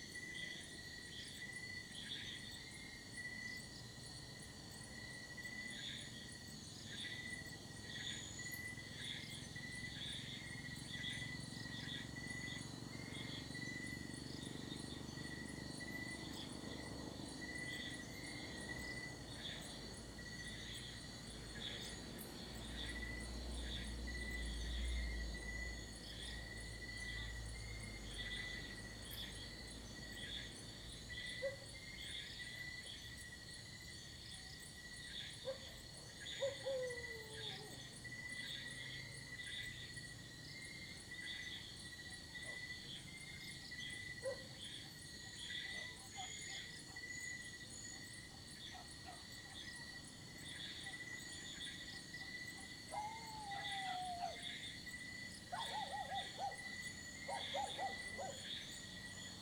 Iruhin East, Tagaytay, Cavite, Filippinerna - Tagaytay Iruhin East Valley #1
Sounds captured just before midnight by the valley along Calamba Road between Tagaytay Picnic Grove and People´s Park in the Sky. Birds, insects, lizards along with occasionally some tricycles, motorbikes and dogs barking. WLD 2016